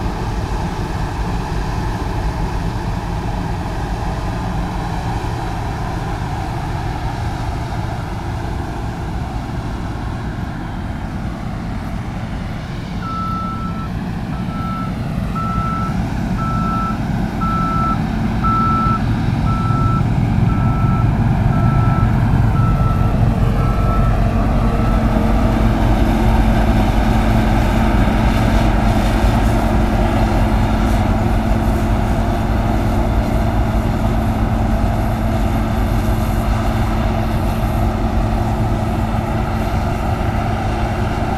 Mont-Saint-Guibert, Belgique - Combine harvester
A combine harvester in the fields, harvesting the wheat.